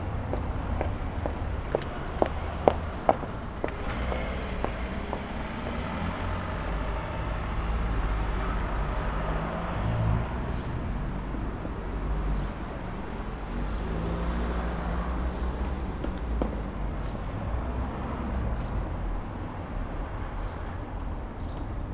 Berlin: Vermessungspunkt Friedelstraße / Maybachufer - Klangvermessung Kreuzkölln ::: 15.04.2011 ::: 11:51